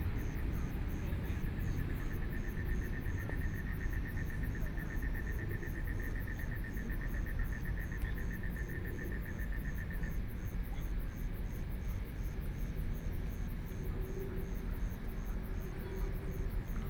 BiHu Park, Taipei City - Sitting next to the lake
Sitting next to the lake, Traffic Sound, People walking and running, Frogs sound
Binaural recordings